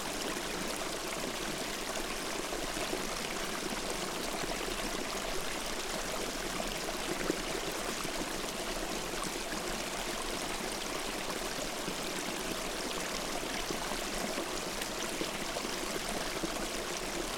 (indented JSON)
{
  "title": "Utena, Lithuania, winter stream",
  "date": "2017-01-17 15:10:00",
  "description": "there's frozen litttle river, but I've found a place with open streamlet",
  "latitude": "55.50",
  "longitude": "25.57",
  "altitude": "106",
  "timezone": "GMT+1"
}